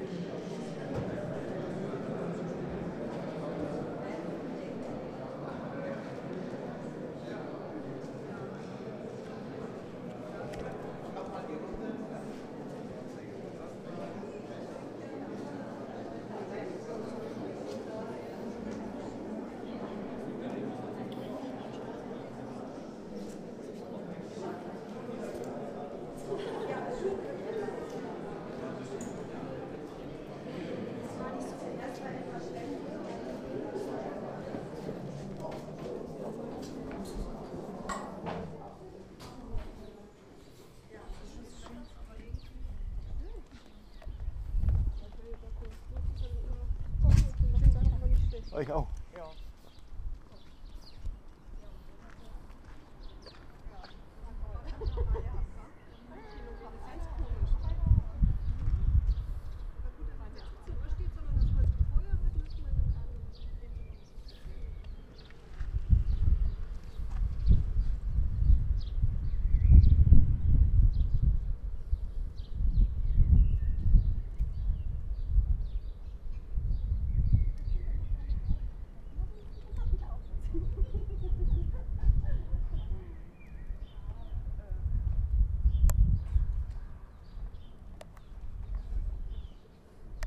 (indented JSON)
{
  "title": "Mitte, Berlin, Deutschland - Congregation",
  "date": "2013-04-21 10:30:00",
  "description": "Congregation leaves St.Michael after Sunday's mass. The difference between the two soundscapes is always fascinating for me, & there's hardly anything more drawing me in than the sound of the human voice. \"h2\".",
  "latitude": "52.51",
  "longitude": "13.42",
  "altitude": "38",
  "timezone": "Europe/Berlin"
}